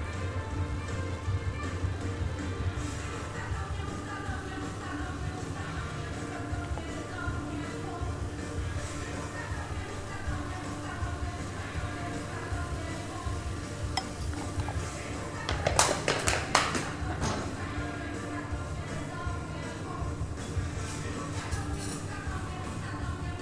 Zakopane, Krupówki, Dworzec Tatrzański, odgłosy Baru